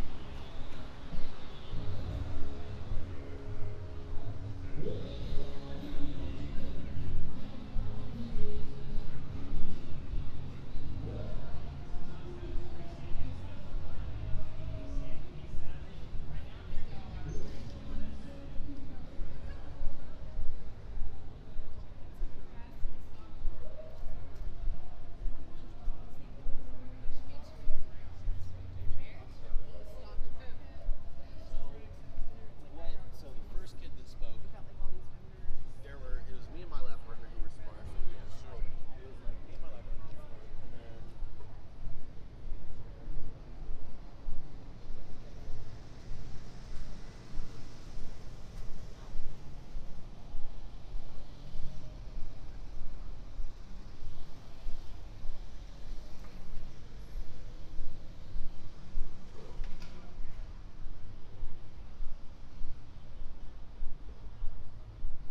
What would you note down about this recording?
A Friday night walk around downtown Roanoke, Virginia. Binaural, Sony PCM-M10, MM BSM-8